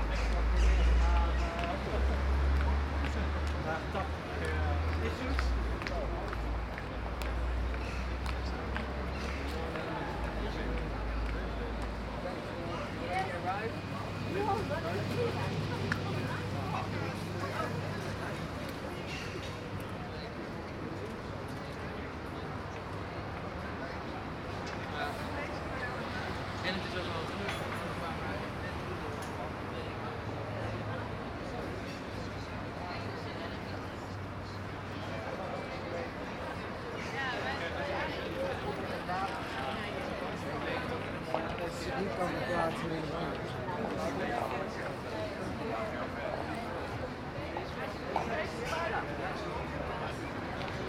Zuidplein, Amsterdam, Netherlands - Zuidplein Ambience
Zuidplein, a "public" plaza in the Zuidas business district of Amsterdam. voices, birds, distant traffic. Binaural recording
Noord-Holland, Nederland, 10 June 2009, ~12:00